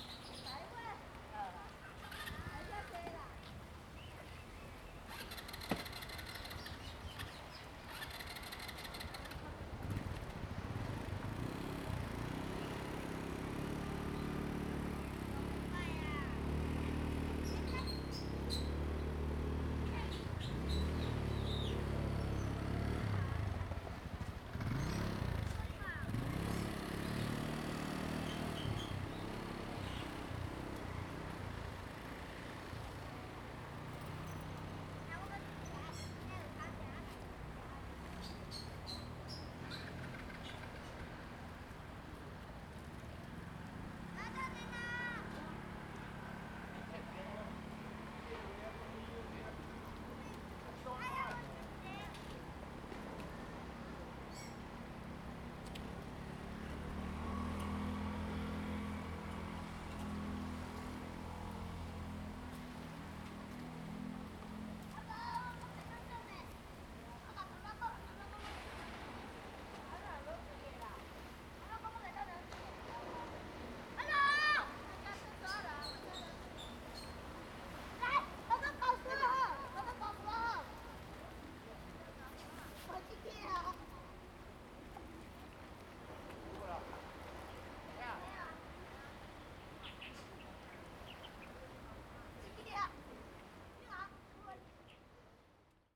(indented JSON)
{
  "title": "漁福漁港, Hsiao Liouciou Island - Small fishing port",
  "date": "2014-11-01 16:00:00",
  "description": "Small fishing port, Traffic Sound\nZoom H2n MS+XY",
  "latitude": "22.35",
  "longitude": "120.39",
  "altitude": "7",
  "timezone": "Asia/Taipei"
}